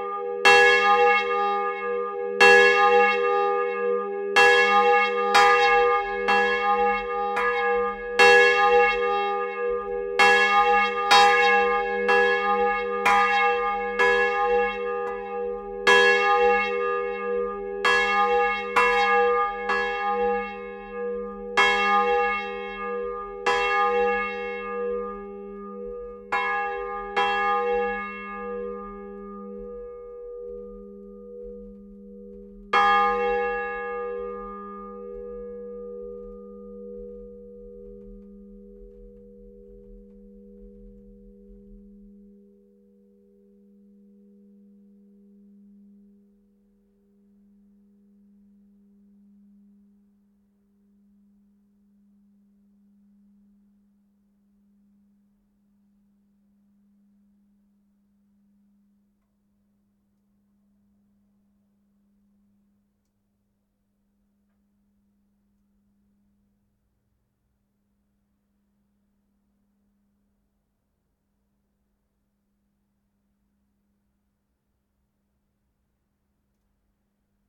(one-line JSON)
{"title": "Rue de la Vieille Cour, Arcisses, France - Margon -Église Notre Dame du Mont Carmel", "date": "2019-10-17 10:00:00", "description": "Margon (Eure et Loir)\nÉglise Notre Dame du Mont Carmel\nla volée", "latitude": "48.34", "longitude": "0.83", "altitude": "127", "timezone": "Europe/Paris"}